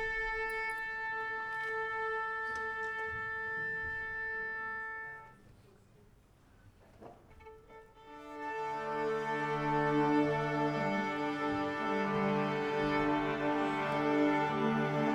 Sønder Blvd., København, Denmark - Pre-concert atmosphere
Pre-concert atmosphere, KUSO amateur orchestra.
Ambiance d’avant concert. Orchestra amateur KUSO.
24 June, ~14:00